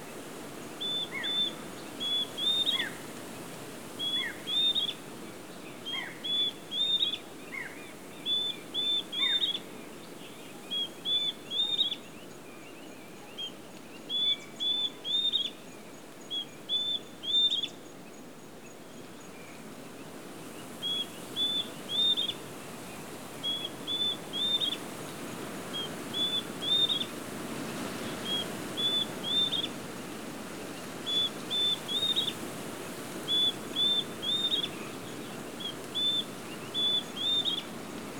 {"title": "Peten, Guatemala - La Danta nature soundscape 2", "date": "2016-03-30 06:00:00", "latitude": "17.75", "longitude": "-89.90", "altitude": "344", "timezone": "America/Guatemala"}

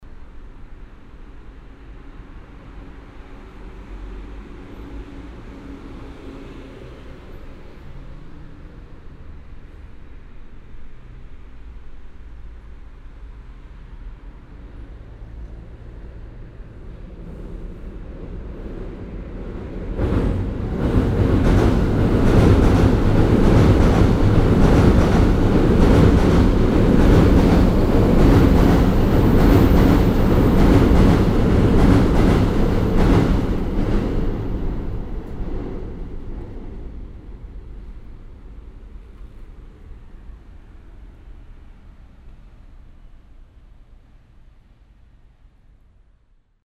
{"title": "yokohama, railway bridge, train", "date": "2011-06-30 12:54:00", "description": "Under a railway bridge. Some traffic noise then a train passing by.\ninternational city scapes - topographic field recordings and social ambiences", "latitude": "35.45", "longitude": "139.63", "altitude": "7", "timezone": "Asia/Tokyo"}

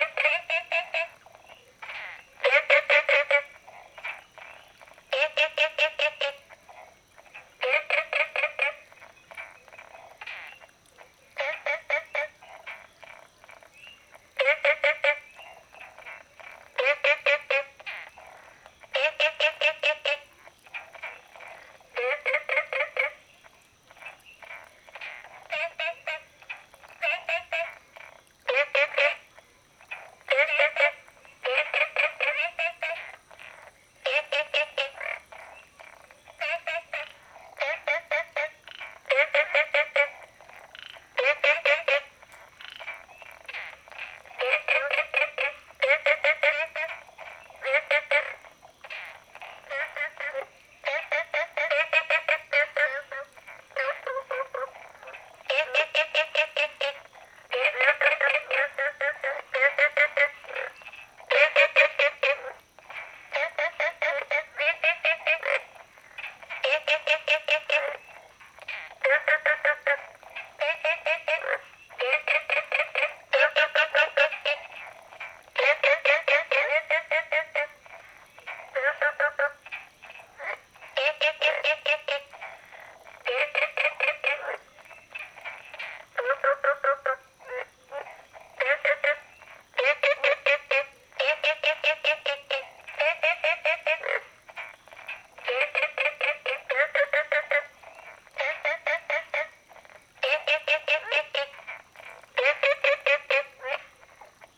{"title": "綠屋民宿, Puli Township - Small ecological pool", "date": "2015-06-09 22:55:00", "description": "Frogs chirping, Small ecological pool\nZoom H2n MS+XY", "latitude": "23.94", "longitude": "120.92", "altitude": "495", "timezone": "Asia/Taipei"}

